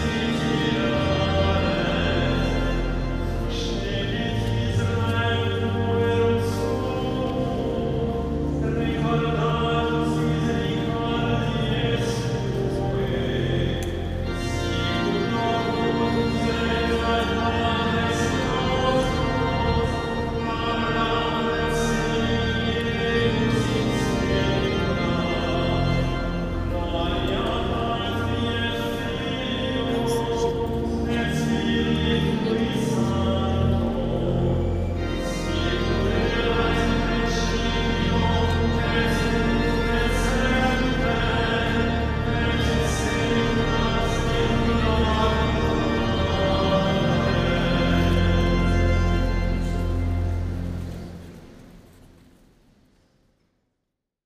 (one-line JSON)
{"date": "2010-09-09 17:29:00", "description": "Fragment of a mass in de Cathédrale de Notre Dame (2). Binaural recording.", "latitude": "48.85", "longitude": "2.35", "altitude": "46", "timezone": "Europe/Paris"}